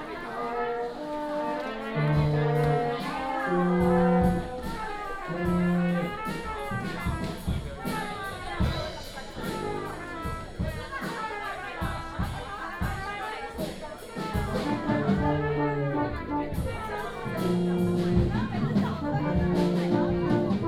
he Affiliated Senior High School of National Chi-Nan University, Taiwan - Many students practice
orchestra, Many students practice
27 March 2016, ~16:00